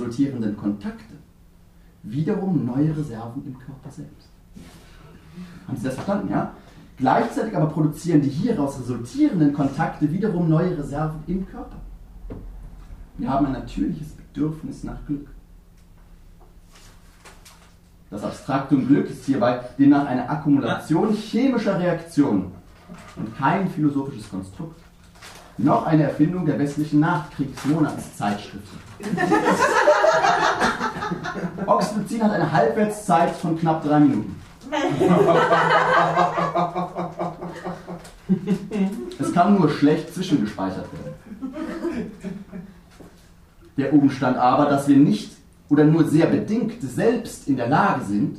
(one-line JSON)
{"title": "Der Kanal, Weisestr. 59. Auschschnitt aus dem 4. Synergeitischen Symposium - Der Kanal, Ausschnitt aus dem 7. Synergeitischen Symposium", "date": "2011-12-17 22:33:00", "description": "This is the second text, entitled ::Das Stöffchen::", "latitude": "52.48", "longitude": "13.42", "timezone": "Europe/Berlin"}